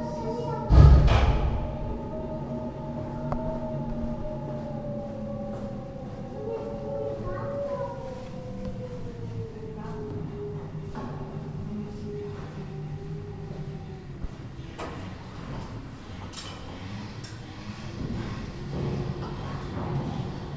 Nova rise, monastery
draft in the monstery of the Nova Rise interiour